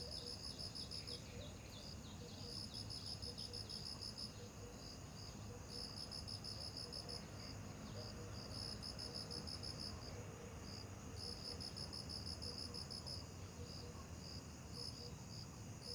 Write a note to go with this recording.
Frogs chirping, Bird sounds, Facing the valley, Zoom H2n MS+XY